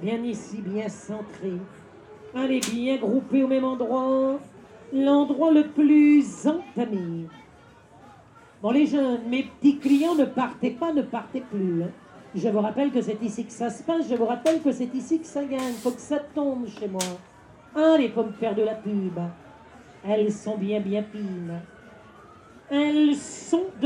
Esplanade, Saint-Omer, France - St-Omer - ducasse
St-Omer (Nord)
Ducasse - fête foraine
Ambiance - extrait 1
Fostex FR2 + AudioTechnica BP4025